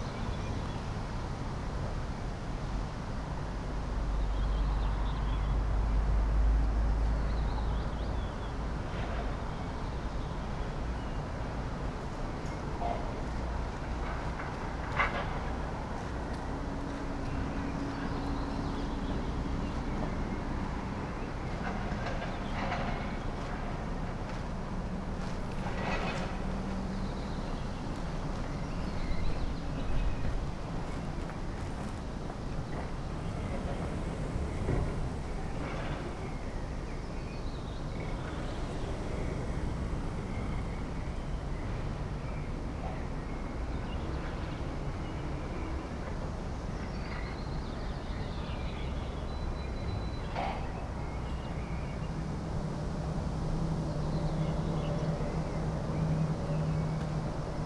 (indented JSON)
{
  "title": "Poznan, UAM campus, Center of advanced technologies - at the entrance",
  "date": "2018-06-05 18:16:00",
  "description": "Recorded at the entrance to the Center Of Advanced Technologies at the UAM campus. The place is not busy at all. Just a few people pass by and enter the building. Fright train passing in the background. Someone hitting something monotonously. Sounds like a big metalic arm of a clock. (sony d50)",
  "latitude": "52.47",
  "longitude": "16.92",
  "altitude": "97",
  "timezone": "Europe/Warsaw"
}